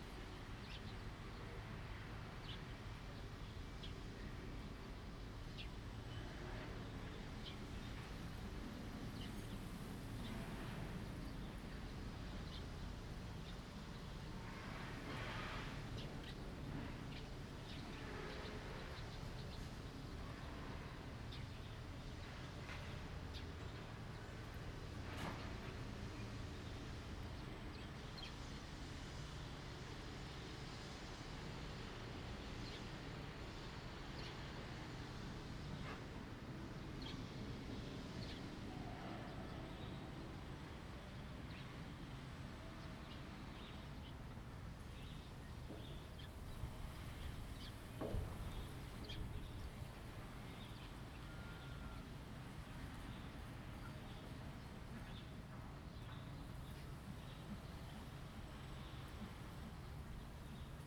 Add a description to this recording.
Birds singing, Wind, In the village square, Zoom H2n MS+XY